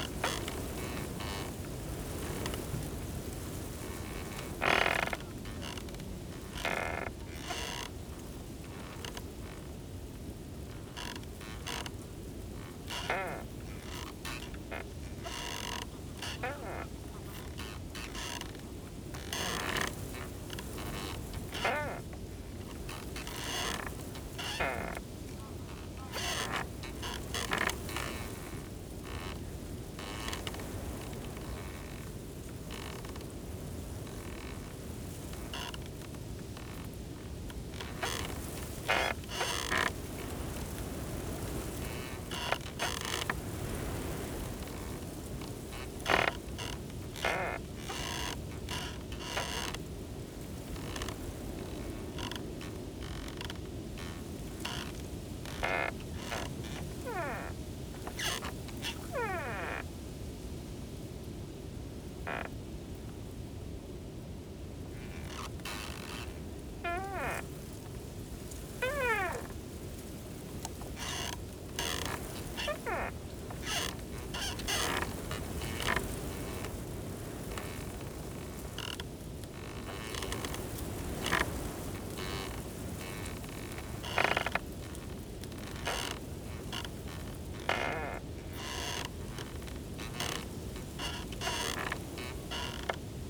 Wooden gate creaks, strong winds, Westwood Marsh, Halesworth, UK - Creaking wooden gate in strong wind, beautiful sunset
Westwood marsh is a very special and atmospheric place with unique wildlife. It is one of the largest reedbeds in the UK surrounded by woodland and heath beside the North Sea and now a Suffolk Wildlife Trust/RSPB nature reserve. I have been coming here for more than 60 years and am always amazed at how unchanged it seems in all that time. Today is a beautiful winter's day with intense bright sun and blue sky. The strong gusty wind hisses through the reeds and rocks trees and people. The old, lichen covered, wooden gates creak and groan. It feels timeless as the evening sun sets below the distant tree line.
England, United Kingdom, 29 January 2022, 16:11